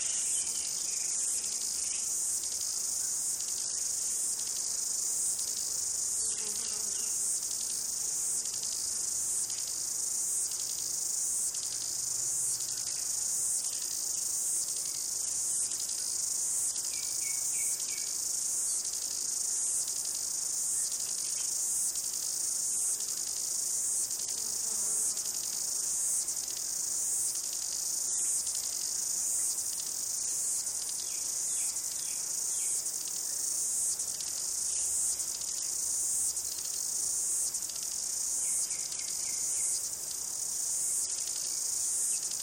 Hunua Ranges, New Zealand - Rosella & Cicadas
7 September 2008, ~10:00